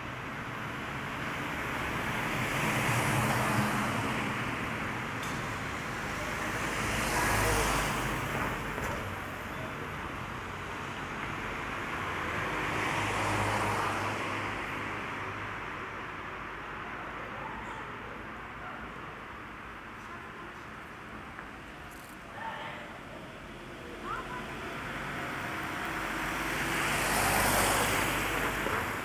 {"title": "Rue Gallait, Schaerbeek, Belgique - Street ambience", "date": "2022-02-23 13:30:00", "description": "Cars, trams and distant conversations.\nTech Note : Ambeo Smart Headset binaural → iPhone, listen with headphones.", "latitude": "50.87", "longitude": "4.37", "altitude": "28", "timezone": "Europe/Brussels"}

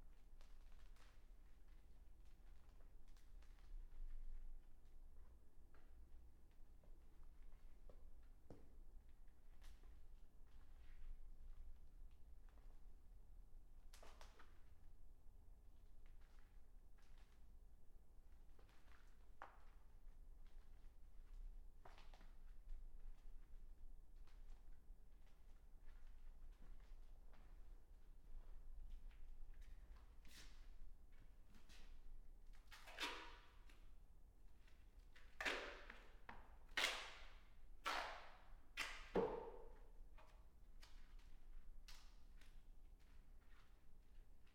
{"title": "Krügerstraße, Mannheim, Deutschland - Clean-up work in a storage building", "date": "2021-07-30 11:00:00", "description": "A person taking down a large (approx. 5 x 3 x 3 meter) bubble tent that was used for storing items in a nitrogen atmosphere. The bubble material (aluminum compound material) is cut to pieces, folded up and placed on a pallet truck with which it is pulled away later on. Floor protection from PVC Material is rolled up. Some parts made of wooden bars are dismantled. The space is cleaned with a broom, the waste taken out and the area is locked. Binaural recording. Recorded with a Sound Devices 702 field recorder and a modified Crown - SASS setup incorporating two Sennheiser mkh 20 microphones.", "latitude": "49.45", "longitude": "8.52", "altitude": "94", "timezone": "Europe/Berlin"}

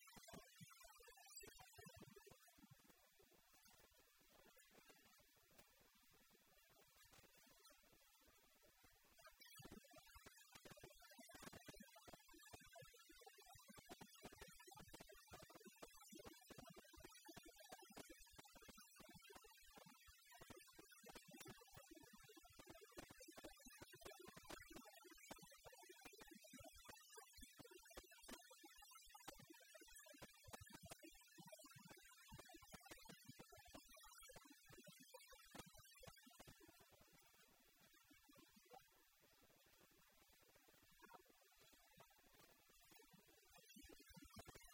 {"date": "2011-03-12 10:58:00", "description": "India, Mumbai, Mahalaxmi Dhobi Ghat, Spin dryer, outdoor laundry", "latitude": "18.98", "longitude": "72.82", "timezone": "Asia/Kolkata"}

